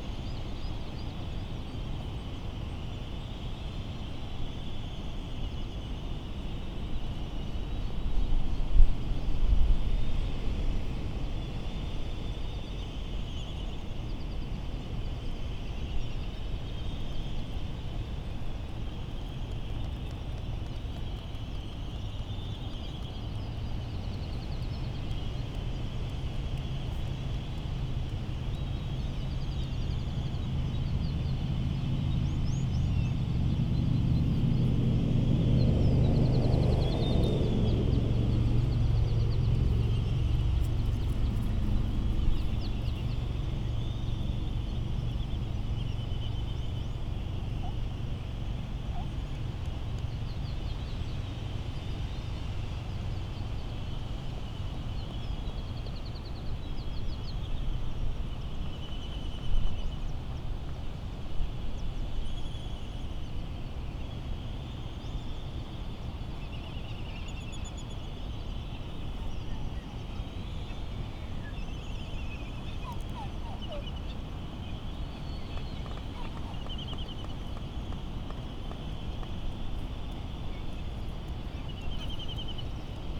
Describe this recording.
Laysan albatross soundscape ... Sand Island ... Midway Atoll ... recorded in the lee of the Battle of Midway National Monument ... open lavalier mics either side of a furry table tennis bat used as a baffle ...laysan albatross calls and bill clapperings ... very ... very windy ... some windblast and island traffic noise ...